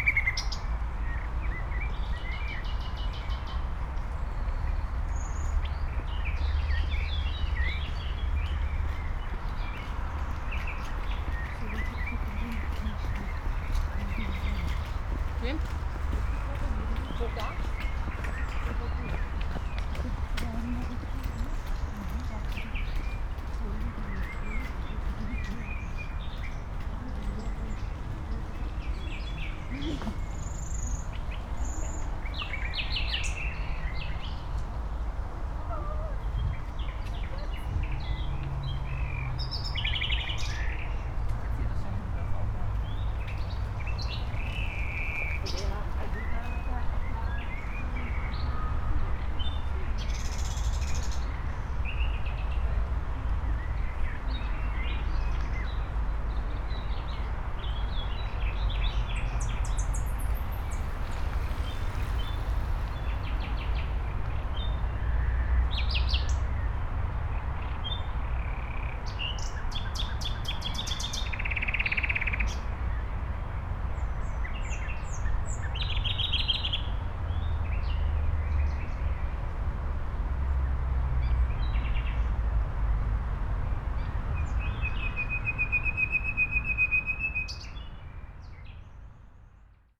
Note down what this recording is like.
Mauerweg, former Berlin Wall area, now it's a nice park alongside ponds and a little canal. songs of nightingales, however not sure if it's not other birds imitating their songs. (Sony PCM D50, Primo EM172)